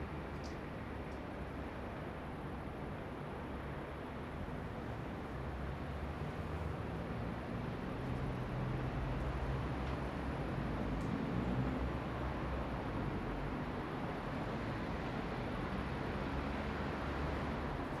Berlin, Leibzigerstrasse, Deutschland - Stadtambi, Mitternacht
Auf dem Balkon. 23. Stockwerk.
Berlin, Germany, 8 June